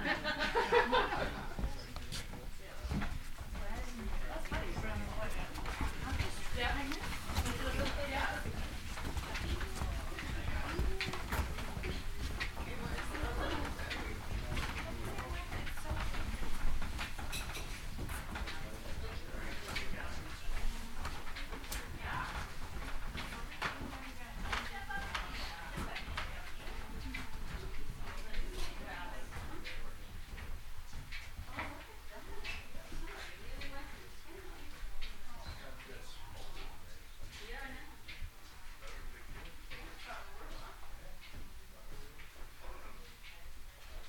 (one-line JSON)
{"title": "Ave. S, Seattle, WA, USA - City Plan Echoes (Underground Tour 3)", "date": "2014-11-12 11:40:00", "description": "Lower level of the Maynard Building. The Yesler \"jog\" explanation. Footsteps, movement within business above and vehicle sounds from above. \"Bill Speidel's Underground Tour\" with tour guide Patti A. Stereo mic (Audio-Technica, AT-822), recorded via Sony MD (MZ-NF810).", "latitude": "47.60", "longitude": "-122.33", "altitude": "21", "timezone": "America/Los_Angeles"}